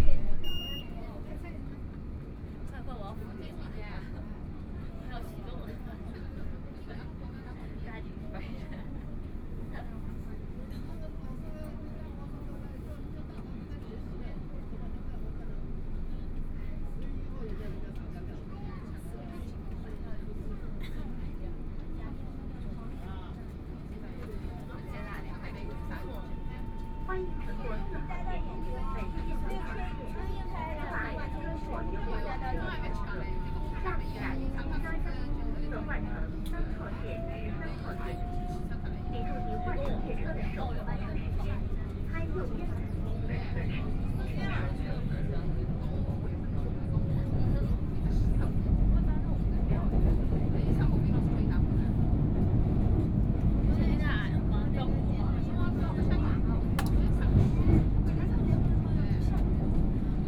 Putuo District, Shanghai - Line 4 (Shanghai Metro)
from Caoyang Road Station to Zhongshan Park Station, Broadcasting messages on the train, Binaural recording, Zoom H6+ Soundman OKM II
Shanghai, China, 23 November 2013